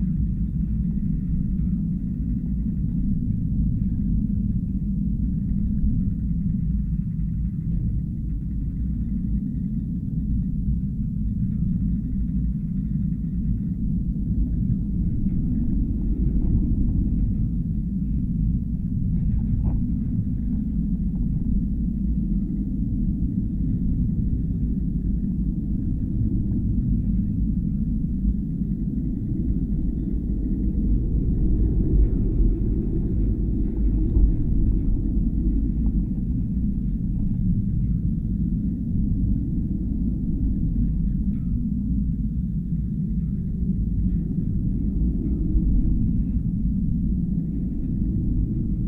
Elafonisi beach, Crete, fence drone
contact microphones on a fence at the beach. grand drone:)